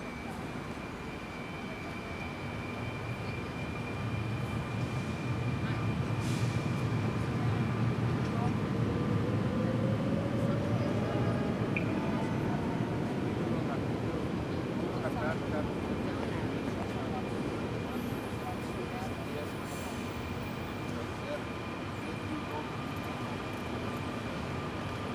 Lisbon, Oriente train station, platform - minutes to departure to Porto
regular platform activity. waiting passengers talking, train arrives and departs on another platform, suitcase wheels rattle, phone conversations.
Lisbon, Portugal, 29 September 2013, 11:30